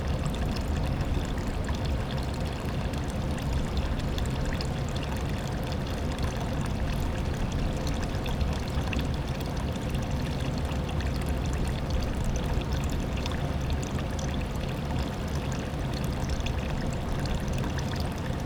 Lithuania, Utena, near the dam
some waterstream falling down near the dams waterfall roaring
1 March 2011, 16:05